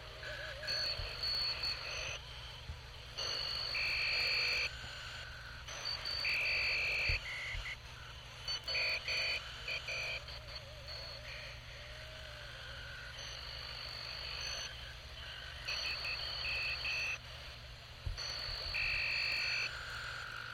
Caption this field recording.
Shortwave reception. Recorded Nov 2001 Wendover, UT in the CLUI residency support unit during my first of many trips to this terminal landscape, on the fringe of the edge of the known world.